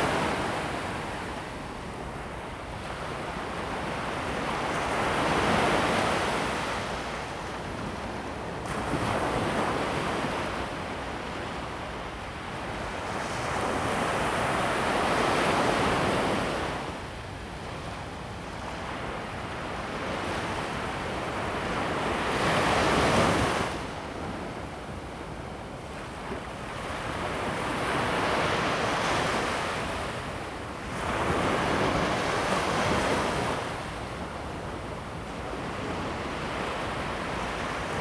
淡水, New Taipei City - the waves
On the beach, Sound of the waves
Zoom H2n MS+XY
New Taipei City, Taiwan